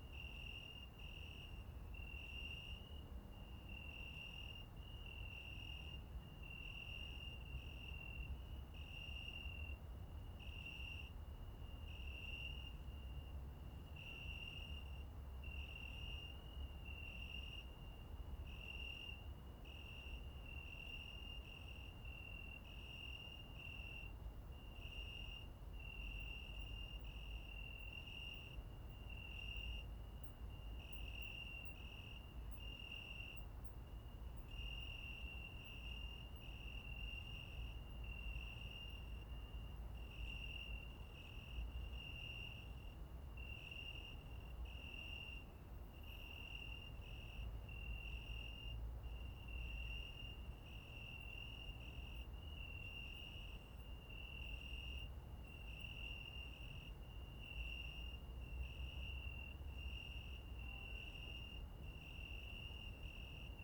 Dendraium, Chișinău, Moldova - The Cricket Symphony from the Dendrarium Park
The Cricket Symphony made in September from the Dedrarium Park. Enjoy!
12 September, 21:00